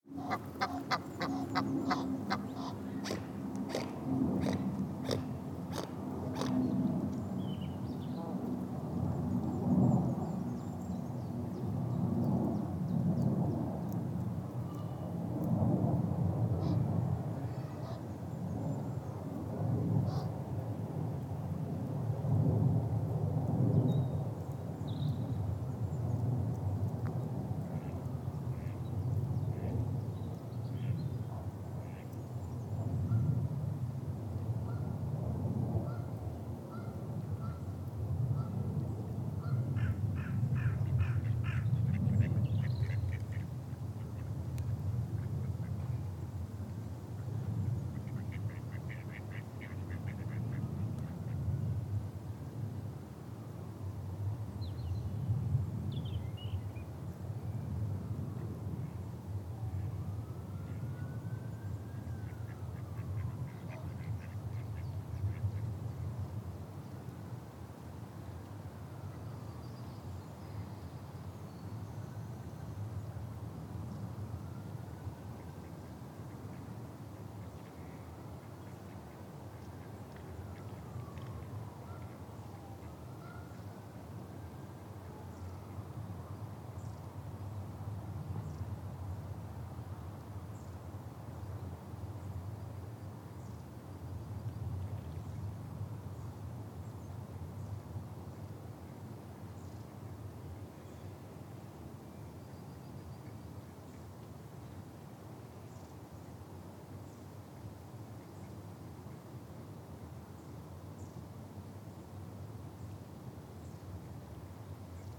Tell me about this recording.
There is an awful lot going on with the waterfowl of the lake this spring; in this recording you can hear ducks quacking and a very territorial swan grunting and hissing (he is waiting for the eggs of himself and his mate to hatch). Keen little gangs of male ducks can also be heard, their quacks are a bit raspier than the female's... and the huffing, gaspy noise is an Egyptian goose who is guarding two goslings and his female mate. Canada geese can be heard honking in the background. There are aeroplanes above, it is very rare to get any recordings in Reading without them, and a little wind because it was quite a windy day... but I'm hopeful you'll enjoy this sonic glimpse of the lake and its residents, who are all very busy making or waiting for babies. There is also a pheasant that honks part way through the recording, and you can hear the tiny little cheep-cheeps of the goslings, and the snipping sound of their parents' chewing the grass by the lake.